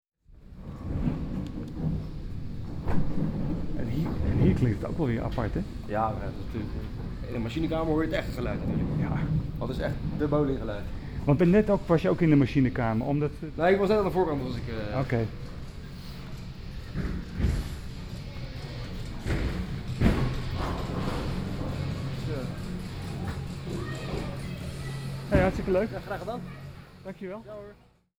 zijruimte
site space bowlingcentre
Leiden, The Netherlands, September 2011